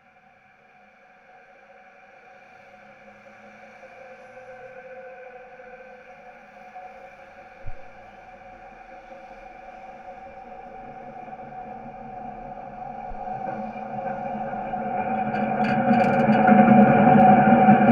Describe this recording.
The UBahn tracks here are elevated above the road. These are the vibrations in one of the massive metal supports recorded with a contact mic. Between trains not much is audible in the structure - just very minimal traffic - so I've edited to shorten the gaps. The sound is somewhat different depending on train direction. In this recording it is eastbound followed by westbound, repeated twice.